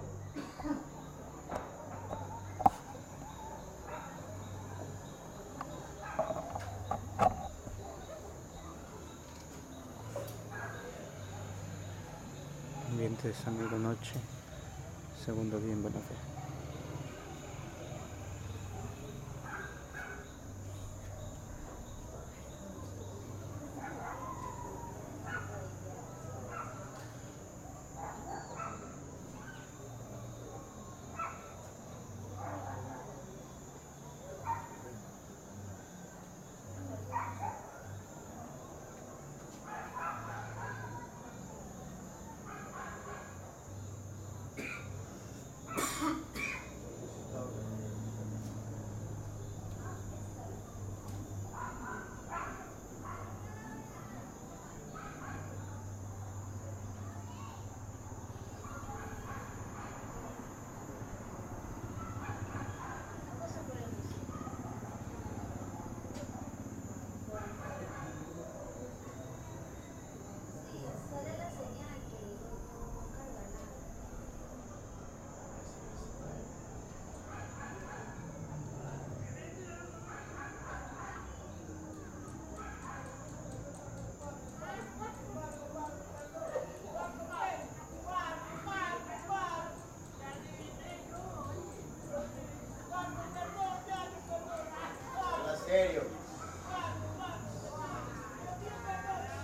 San Jacinto de Buena Fe, Ecuador - Talking with friends: At night on the roof.
Having some beers with friends, you can hear the night ambiance and mood of the typical ecuadorian coast town.
1 March